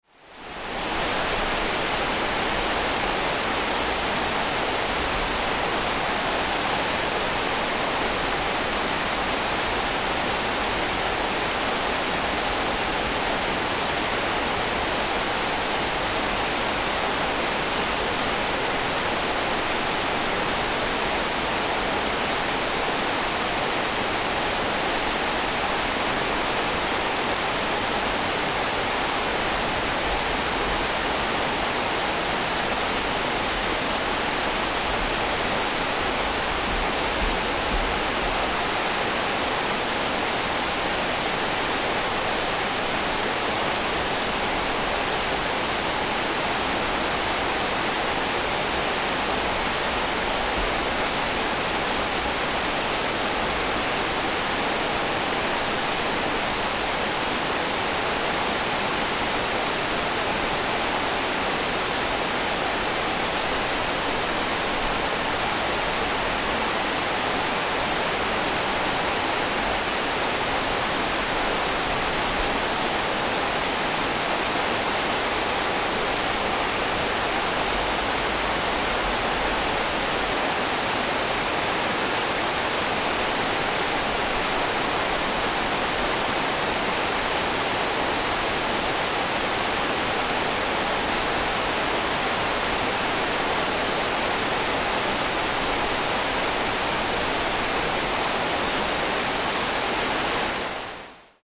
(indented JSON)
{
  "title": "Leibstadt AKW, Schweiz - Im Kühlturm eines AKW",
  "date": "2001-10-14 11:43:00",
  "description": "Heiss, feucht, Regen\nNovember 2001",
  "latitude": "47.60",
  "longitude": "8.19",
  "altitude": "324",
  "timezone": "Europe/Zurich"
}